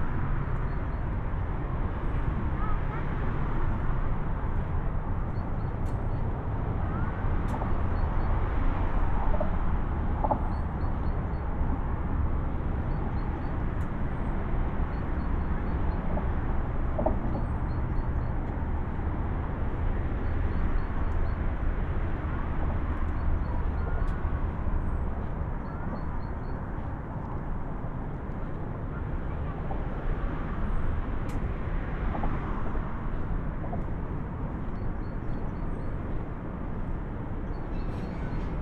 Huntebrücke, Oldenburg, Deutschland - sidewalk below lane
a sidewalk just below the driving surface of the Autobahn allows pedestrians to cross the river Hunte. The sound of passing-by cars at high speed dominates the soundscape.
(Sony PCM D50, Primo EM172)
Oldenburg, Germany, February 2016